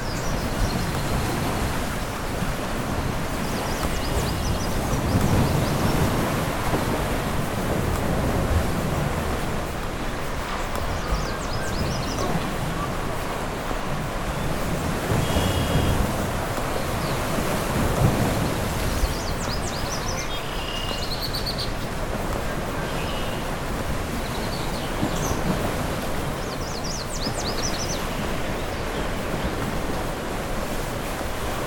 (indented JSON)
{
  "title": "Leamington, ON, Canada - Point Pelee National Park near the tip",
  "date": "2022-05-21 13:12:00",
  "description": "Near the tip of the point, and including the sounds of migrating birds, for which this is a key resting spot in their journey north in the Spring.\nZoom H6 w/ MS stereo mic head.",
  "latitude": "41.91",
  "longitude": "-82.51",
  "altitude": "172",
  "timezone": "America/Toronto"
}